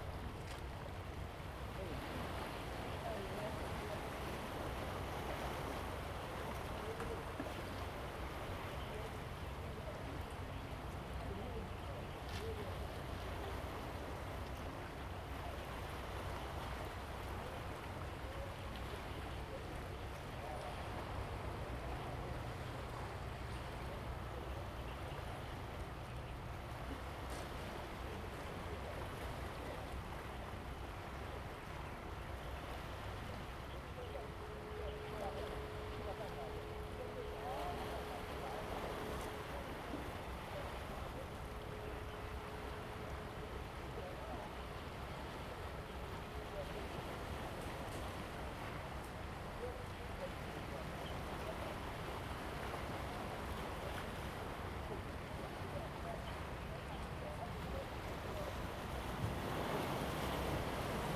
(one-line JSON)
{"title": "Liuqiu Township, Pingtung County - In front of the temple", "date": "2014-11-01 09:32:00", "description": "In the square in front of the temple, Tourist, Sound of waves and tides\nZoom H2n MS +XY", "latitude": "22.36", "longitude": "120.38", "altitude": "2", "timezone": "Asia/Taipei"}